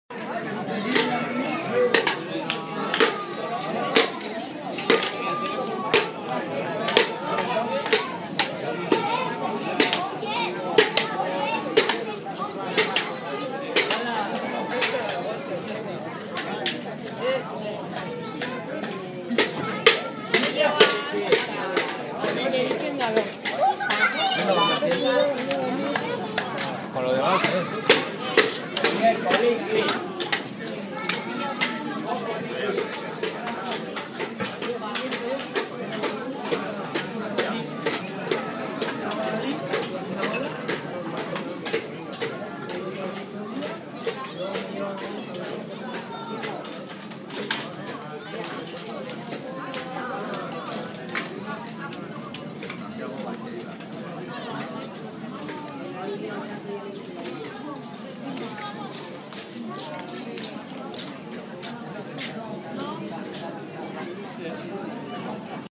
Children on Plaza Nafarroa, Deba, Espana, iPhone 5S